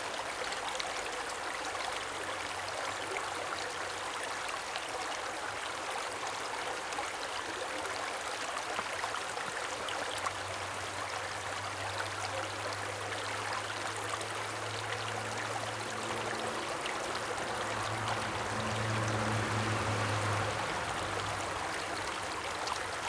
wermelskirchen, kellerstraße: eschbach - the city, the country & me: eschbach creek
the city, the country & me: may 7, 2011
Wermelskirchen, Germany